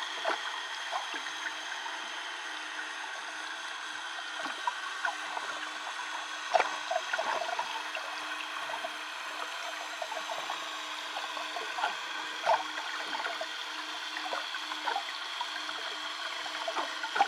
boat motors in the Daugava river